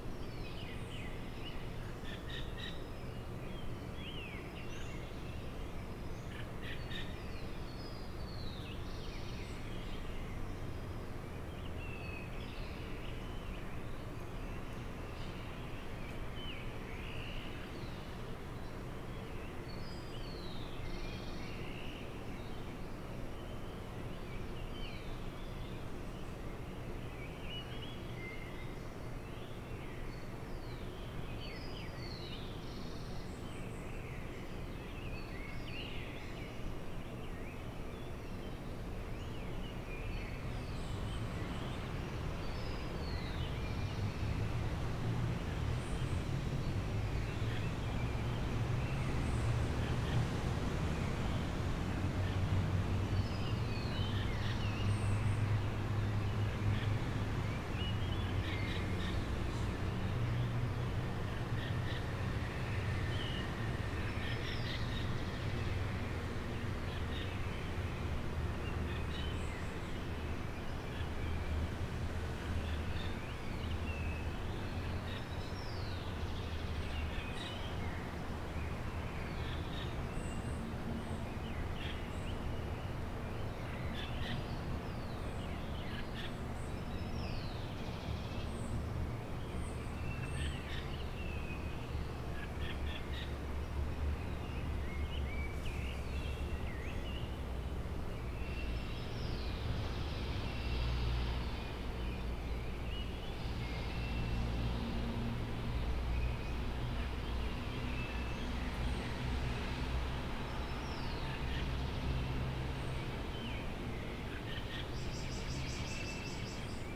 Cl., Bogotá, Colombia - Covid sunrise
Sunrise, May 26th 2020, during the COVID-19 quarantine. Lockdown had been eased, that is why your can hear cars passing by. But the bird songs are clear, and stronger than pre-quaratine times.
26 May, 04:47